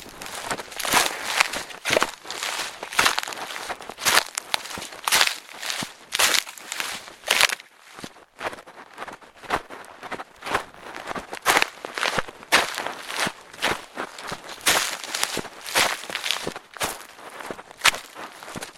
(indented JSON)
{
  "title": "Largu, walking on the straws and garbage. - Largu, walking on the straw and garbage.",
  "latitude": "44.98",
  "longitude": "27.14",
  "altitude": "42",
  "timezone": "GMT+1"
}